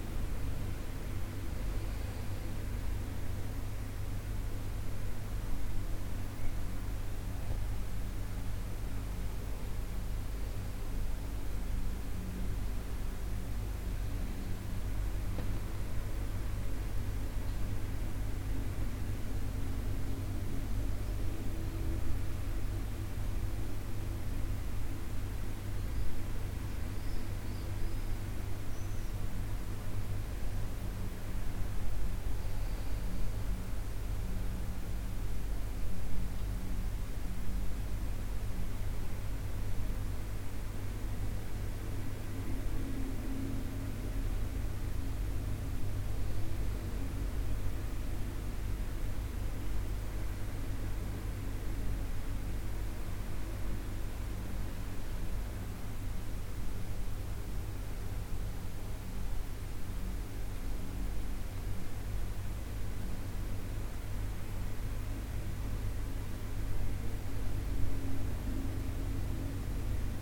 amsterdam, prinsengracht, inside hotel room
inside a hotel room, windws open the fan running
city scapes international - social ambiences and topographic field recordings
11 July 2010, 13:15